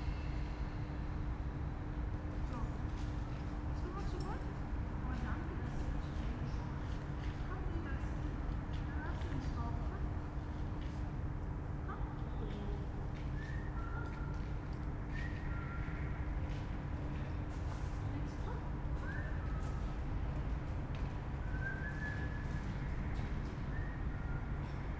Mollstr. / Keibelstr., Berlin, Deutschland - downtown residential area, evening yard ambience
building block between Mollstr and Keibelstr, Berlin, inner yard, late summer evening, darkness, some voices, a siren very loud, people walking dogs, distant traffic noise, redundant
(Sony PCM D50, Primo EM172)